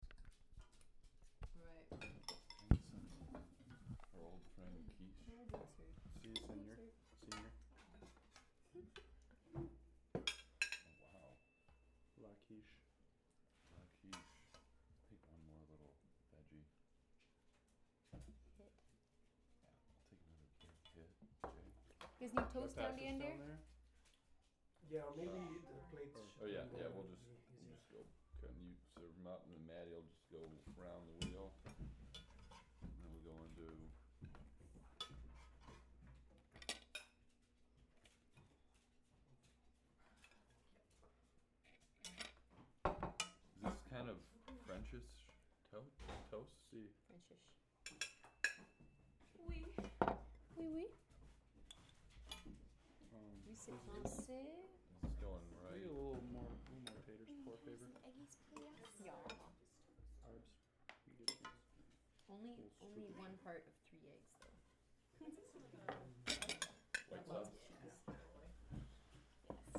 el bruncho
brunch at the ranch oakland california urban garden local food organic goodness friends love happy times !!!
California, United States of America